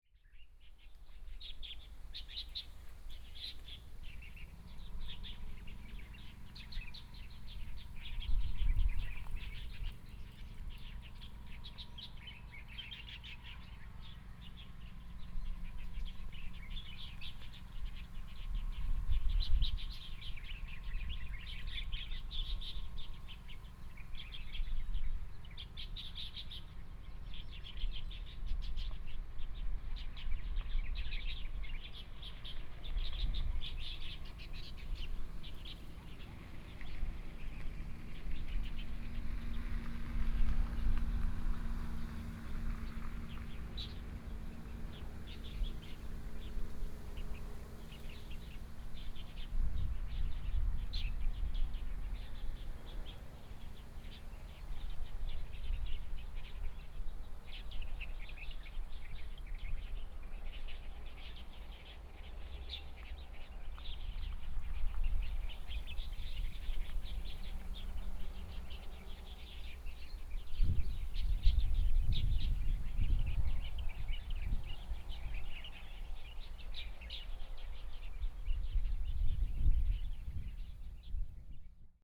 Zhiben, Taiwan - Sparrow
Traffic Sound, Birds singing, Sparrow, Binaural recordings, Zoom H4n+ Soundman OKM II ( SoundMap20140117- 4)
17 January, 13:41